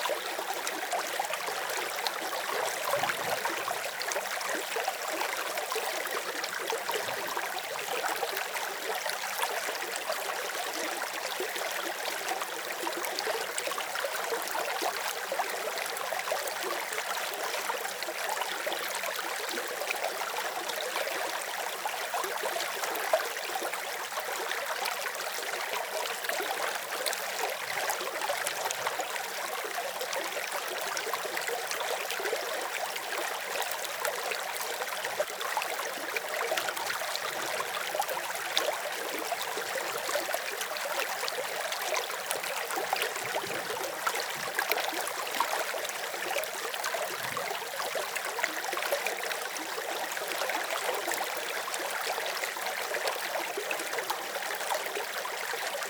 From the mountain lake called Akna Lich (alt: 3025m), a small stream is flowing.